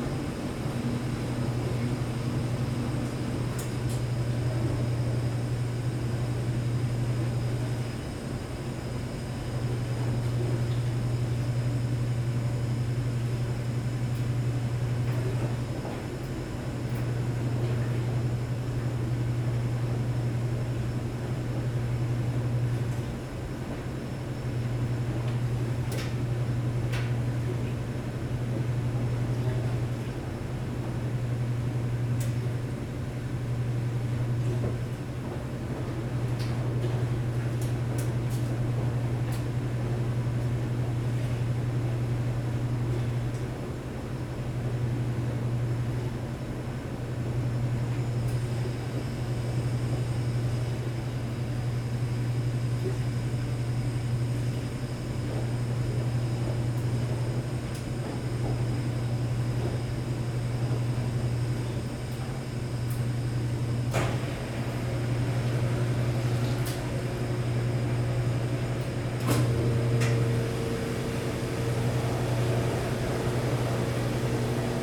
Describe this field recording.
This was recorded inside the basement laundry room. There is also a lot of noise from the HVAC system.